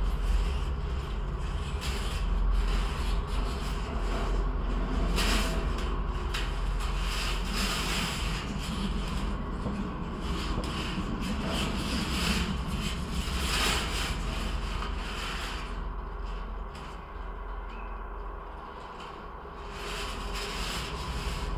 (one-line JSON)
{"title": "Margirio g., Ringaudai, Lithuania - Metal plate fence", "date": "2021-04-15 19:00:00", "description": "A four contact microphone recording of a brand new metal plate fence. Sounds of traffic resonate throughout the fence, as well as some tree branches brushing against it randomly. Recorded with ZOOM H5.", "latitude": "54.89", "longitude": "23.81", "altitude": "82", "timezone": "Europe/Vilnius"}